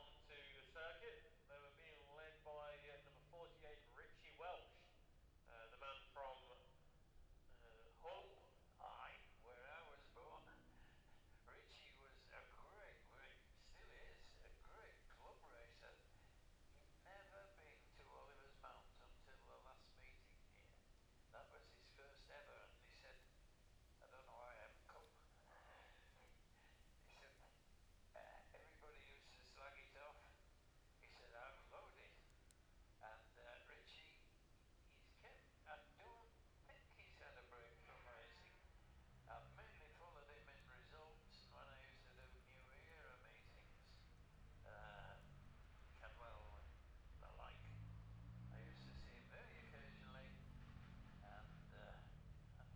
Jacksons Ln, Scarborough, UK - gold cup 2022 ... lightweight practice ...
the steve henshaw gold cup 2022 ... lightweight practice ... dpa 4060s on t-bar on tripod to zoom f6